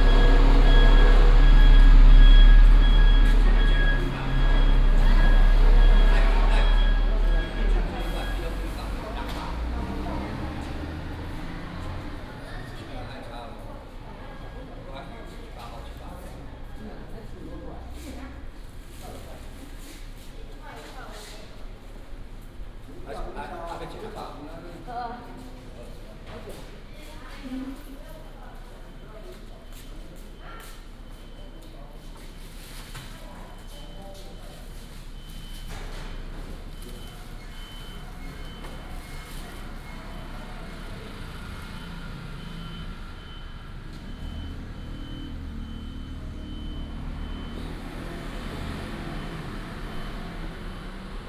嘉義客運北港站, Beigang Township - Old bus terminal
Old bus terminal, traffic sound
Binaural recordings, Sony PCM D100+ Soundman OKM II